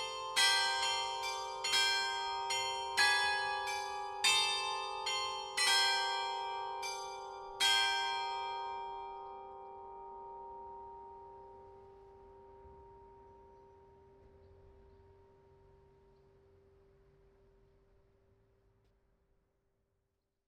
Pl. Léon Blum, Desvres, France - Carillon de Desvres
Desvres (Pas-de-Calais)
Carillon sur la place du Bourg.
Ritournelles automatisées programmées depuis la mairie.